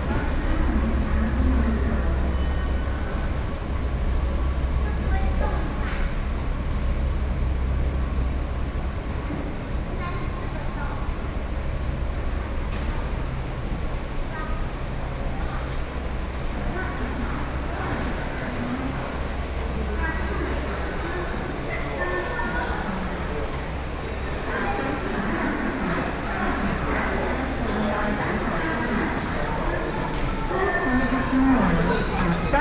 Taipei Bridge Station, New Taipei city - the MRT stations